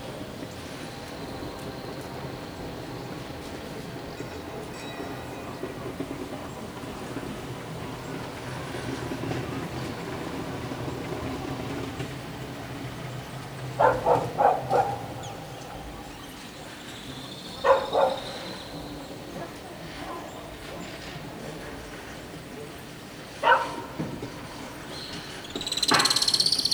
Orthodox Assumption Monastery of the Caves, Bakhchsysaray, Crimea, Ukraine - Into the valley, into the chapel
The Assumption Monastery of the Caves is carved into a cliff. The date of it's foundation is disputed, although local monks assert that it originated as early as the 8th century but was abandoned when Byzantium lost its hold on the region. The current monastic establishment dates back to the 15th century.
In 1921 the monastery was closed by the Soviet government. After the dissolution of the Soviet Union and Ukrainian independence the monastery was reopened to the public in 1993. The self-empowered garde of Kosaks protects the site with whips and sabre against wrong behaving people and the local Tatars, whom they consider as a threat.
From the steps up into the entrance-hall-chapel, with a zoom recorder I catch the clouds of shouting swallows, monks and their herds of goats, sheep and cows in the valley, a huge modern drill carving deeper into the mountains on the other side and behind me tourists and helpers of the monastry pass.